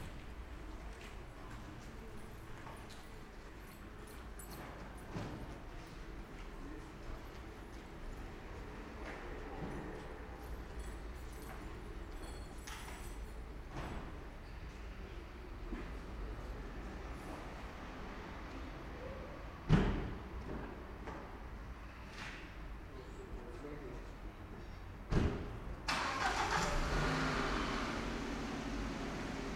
In der guthsmuthsstraße, aufgenommen vom autodach aus auf dem parkplatz am straßenrand. anwohner und autos.